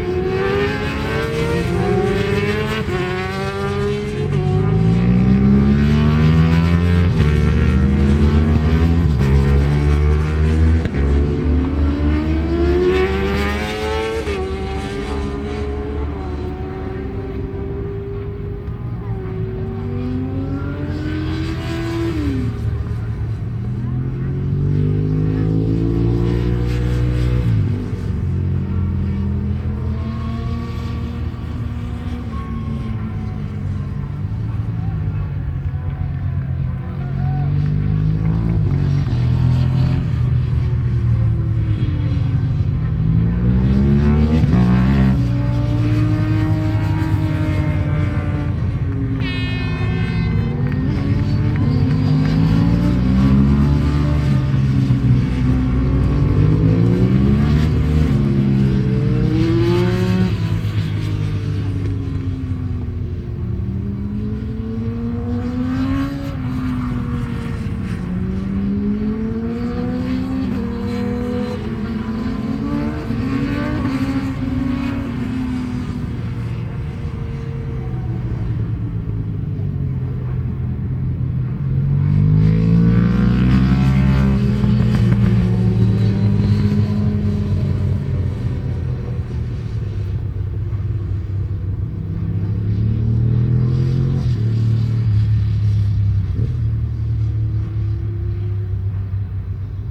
Unit 3 Within Snetterton Circuit, W Harling Rd, Norwich, United Kingdom - British Superbikes 2000 ... superbikes ...
British Superbikes 2000 ... warm-up ... Snetterton ... one point stereo mic to minidisk ...
25 June 2000